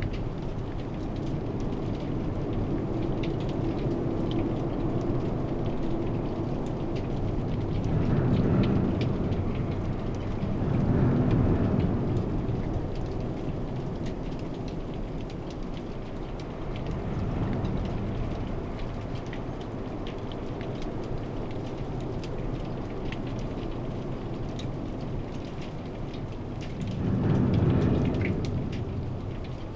M/S microphones and two hydrophones in the river. The rattling sound is from stones in the river.
Limburg, Nederland, 26 January 2022